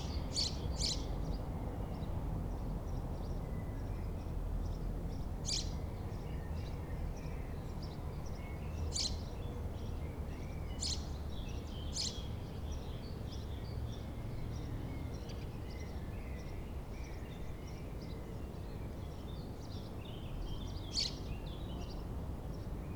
berlin, bergmannstraße: dreifaltigkeitskirchhof II - the city, the country & me: holy trinity graveyard II
cemetery ambience, birds
the city, the country & me: april 24, 2011
24 April 2011, 16:32